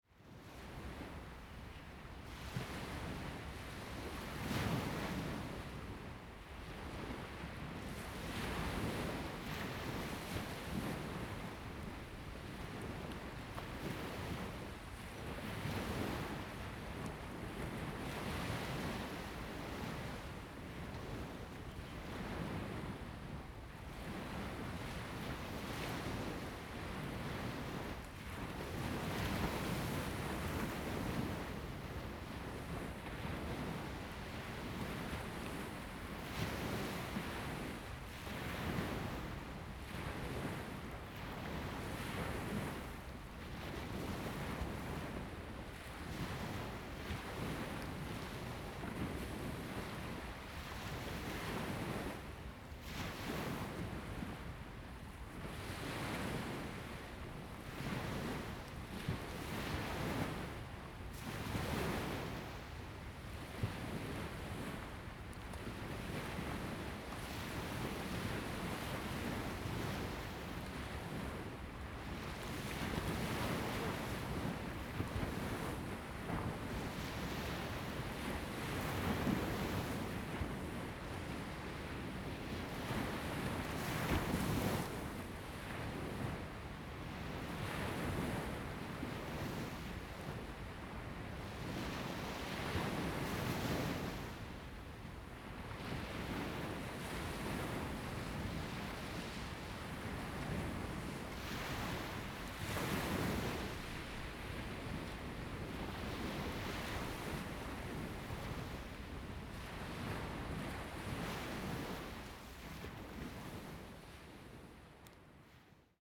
貓公石沙灘, Lieyu Township - At the beach
At the beach, Sound of the waves
Zoom H2n MS +XY